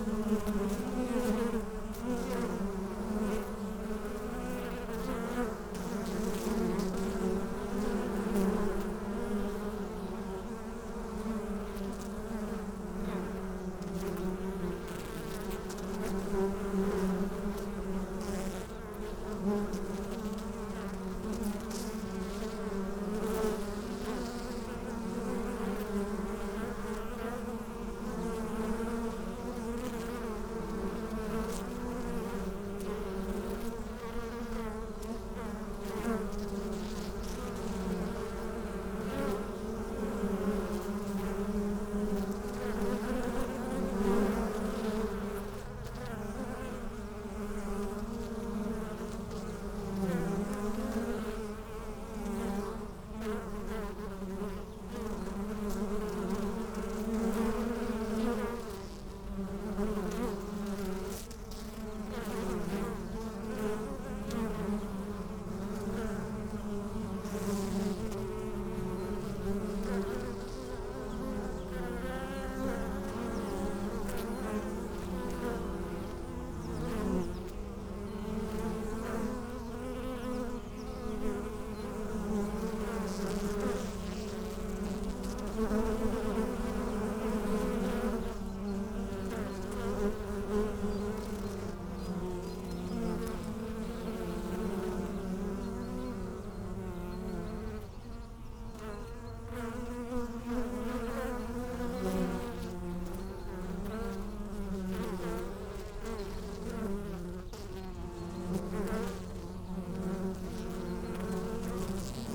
Friedhof Columbiadamm, Berlin - busy bees
Berlin, Alter Garnisonsfriedhof, cemetery, busy bees on a sunny afternoon in early spring
(Sony PCM D50, Primo EM172)